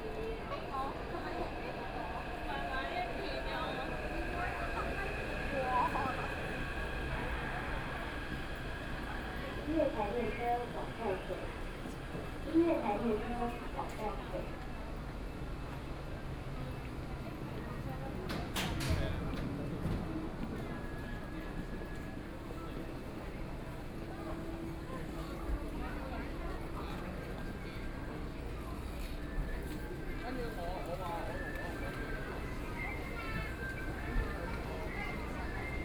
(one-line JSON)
{"title": "中正區黎明里, Taipei City - To MRT station", "date": "2014-04-03 13:57:00", "description": "Walking To MRT station, Traffic Sound, The crowd", "latitude": "25.05", "longitude": "121.52", "altitude": "22", "timezone": "Asia/Taipei"}